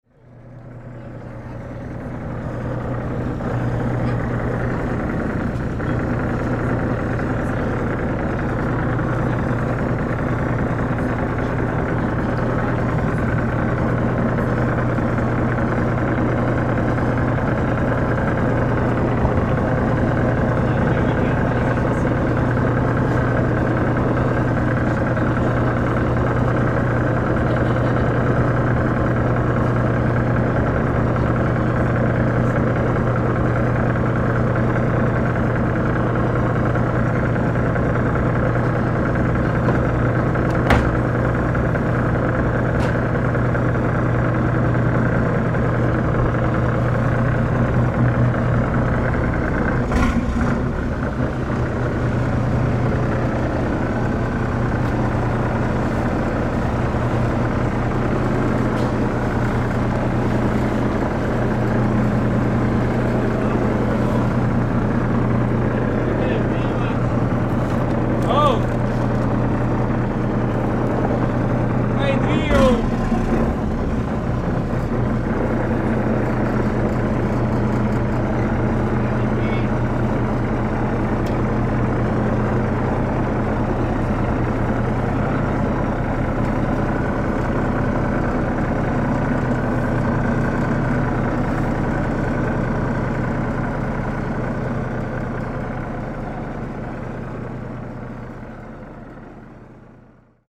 Murano, Venezia, Italie - Working on boat
Men working on a boat in Murano, Zoom H6
21 October, 14:18